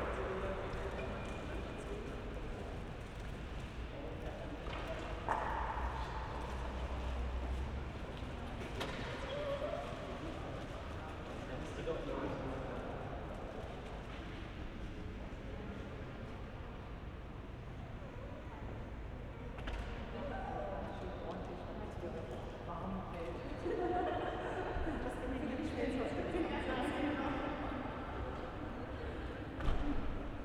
berlin, friedrichstr., kontorenhaus - entry hall
a few meters away from the previous position, sides changed